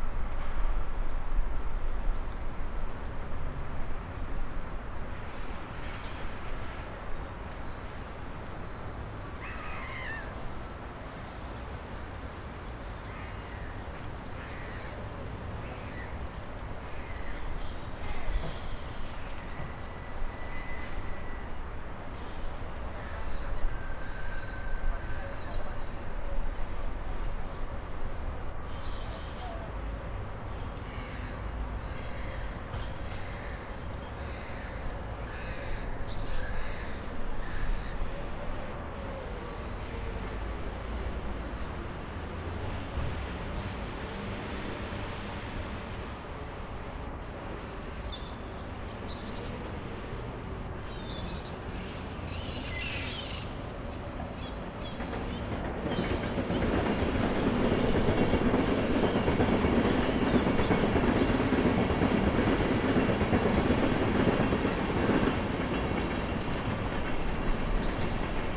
Binckhorst Mapping Project: Bontekoekade. 12-02-2011/15:37h - Binckhorst Mapping Project: Bontekoekade
The Binckhorst Mapping Project